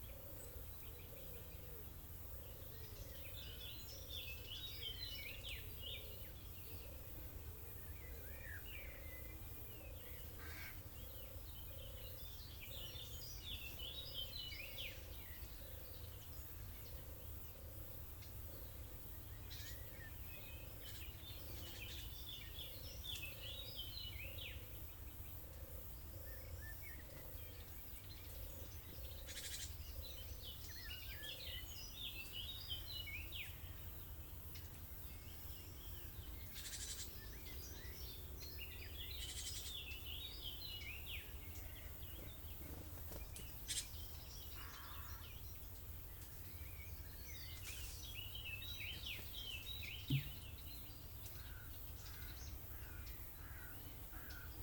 Lago di Martignano, Anguillara Sabazia RM, Italy - On a dusty road
Between Bracciano and Martignano lakes, in the old dried crater called "Stracciacappe".
Wind, distant planes and some occasional cyclist that passes on the track chatting. Lot of animals, mainly crows.
Using Clippy EM 272 into Tascam DR100 MKII hanged on tree branches (sort of AB stereo recording spaced approx. 1m)
No filter applied, just some begin/end trim
Lazio, Italia